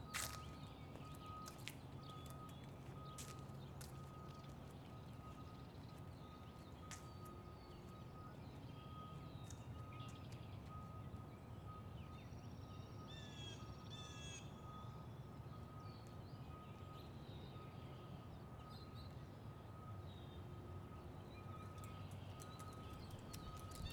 Waters Edge - Watching a Storm Come in
Listen along as I watch a mid morning severe warned storm come through. Theres some wind initially then a hard rain falls. The local Skywarn net can be heard from my radio. Fortunately there was no hail or damage.
Washington County, Minnesota, United States, 9 May